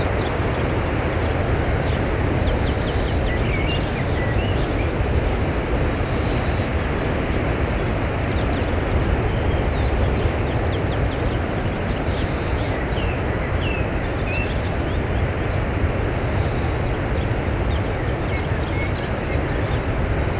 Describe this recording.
Early morning. Waves in background and bird songs. Tôt au matin. Bruit des vagues et chants des oiseaux.